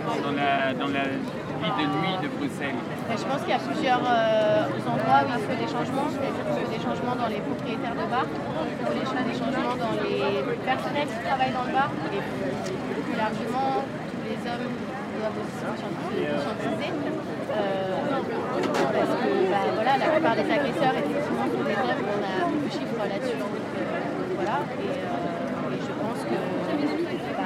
Place de l'Albertine, Bruxelles, Belgium - Balance ton bar demonstration
Demontstration to boycott bars and discos and denounce drug-related sexual abuse in them.
There have been a lot of testimonies along the evening, in this recording you can hear some journalists making interviews of participants.
Tech Note : Sony PCM-D100 internal microphones.